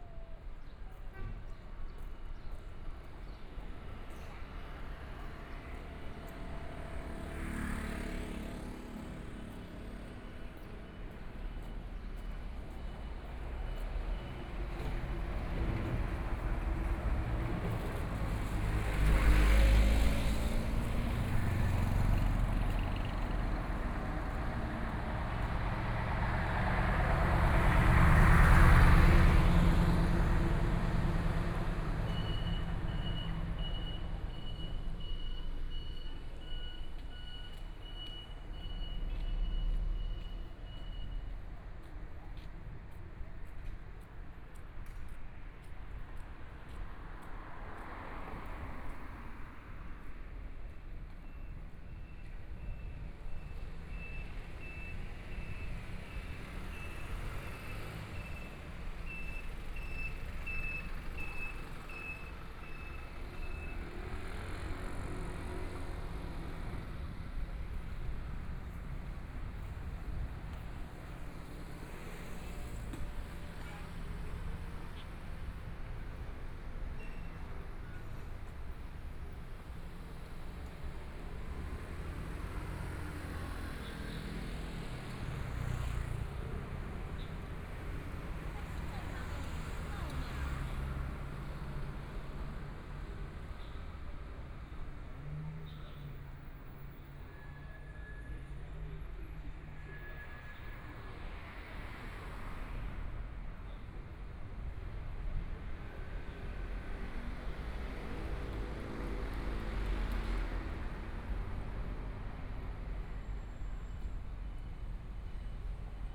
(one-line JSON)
{"title": "Fuji Rd., Hualien City - on the Road", "date": "2014-02-24 10:02:00", "description": "walking on the road, Traffic Sound\nBinaural recordings\nZoom H4n+ Soundman OKM II + Rode NT4", "latitude": "24.00", "longitude": "121.60", "timezone": "Asia/Taipei"}